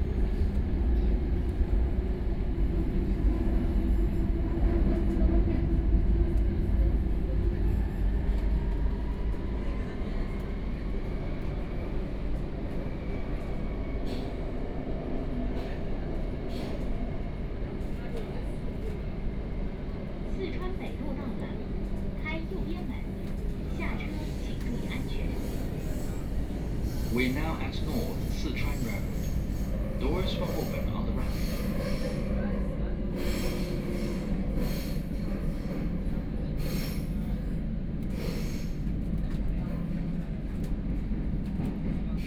from Hailun Road station to East Nanjing Road station, Binaural recording, Zoom H6+ Soundman OKM II